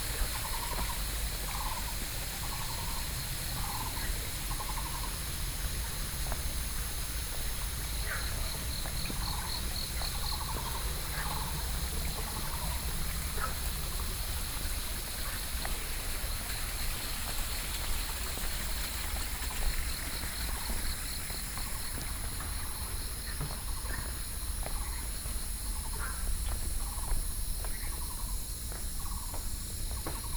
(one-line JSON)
{"title": "Tianmu, Shilin District - Cicadas and Frogs", "date": "2012-06-23 08:37:00", "description": "walking in the Hiking trails, Cicadas, Frogs, Sony PCM D50 + Soundman OKM II", "latitude": "25.13", "longitude": "121.54", "altitude": "180", "timezone": "Asia/Taipei"}